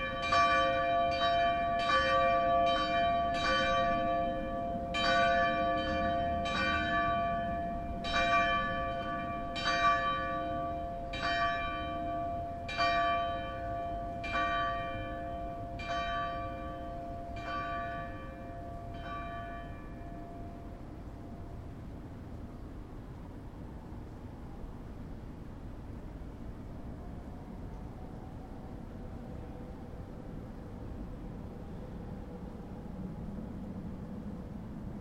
Vorsilska zahrada
Former ambit of the monastery of Ursula. One side is the building of the New Scene National Theater, the other baroque building of the monastery.
The bells from the Ursula Church
March 2011